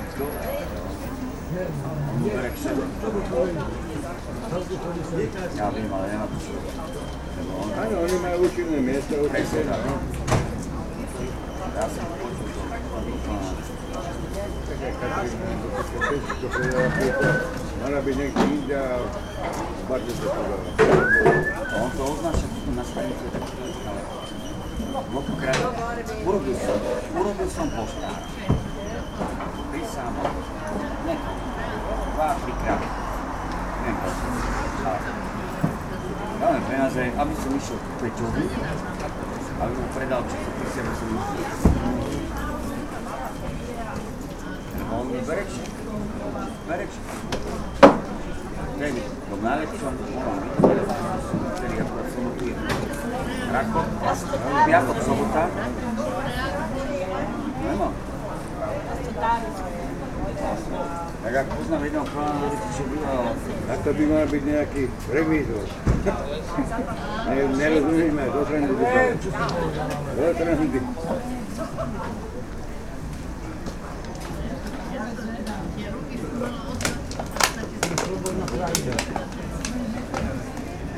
bratislava, market at zilinska street - market atmosphere IX
recorded with binaural microphones
Bratislava, Slovakia, 26 October 2013, 12:04